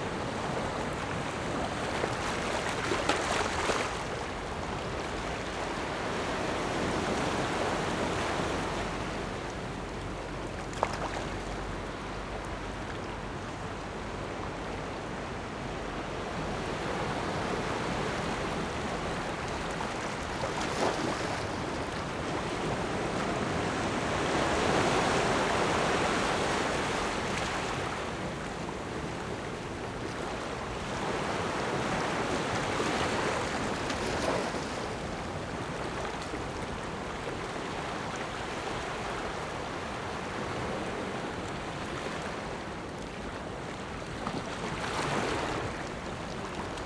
Jizatay, Ponso no Tao - Small pier
Small pier, Wave
Zoom H6 +Rode NT4
29 October 2014, 17:52